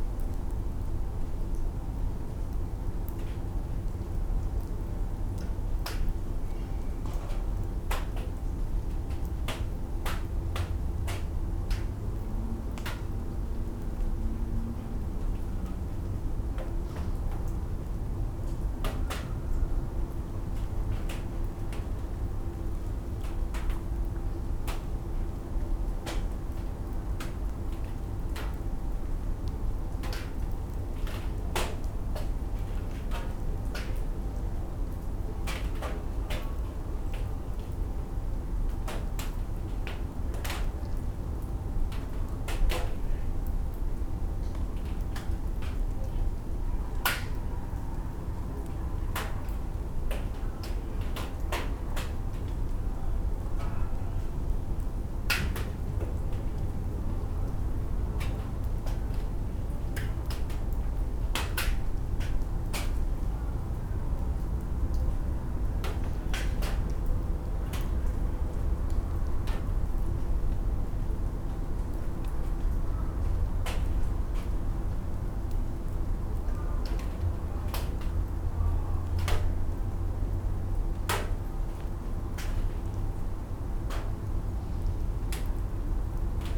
Jana III Sobieskiego housing complex - roof after rain
raindrops falling from trees on a tin roof of a shed that holds garbage containers. also sounds of traffic from a main street nearby and some late evening sounds from the surrounding buildings. (roland r-07)